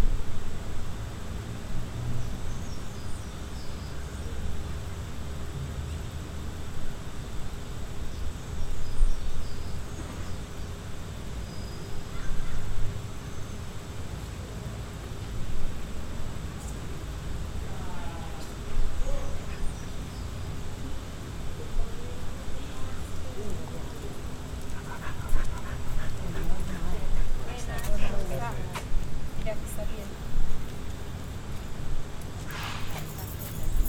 Cra., Medellín, Belén, Medellín, Antioquia, Colombia - inocencia fantasma
Este parque infantil se encuentra solo porque recién llovíó alrededor de las 5:45. DE allí se puede
deslumbrar la soledad que representa la ausencia de las inocentes almas de los niños jugando y
disfrutando de sus jóvenes vidas
4 September